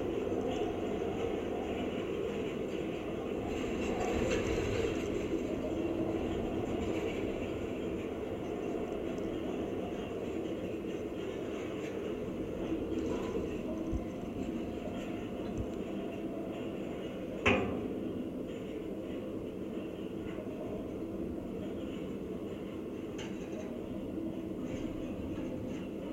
Kirkby Stephen, UK - Wire Fence
Smardale Gill Nature Reserve. Sunday day wind blowing through a wire fence recorded with two Barcus Berry contact mics into SD MixPre 10T.
Part of a series of recordings for a sound mosaic of the Westmorland Dales for the Westmorland Dales Landscape Partnership.